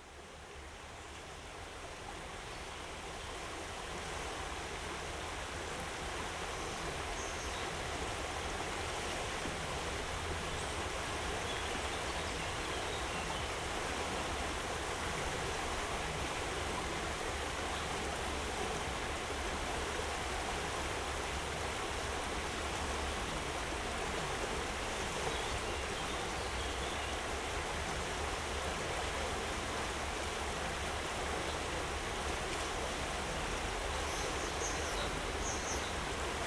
Bernardinai garden, standing at river...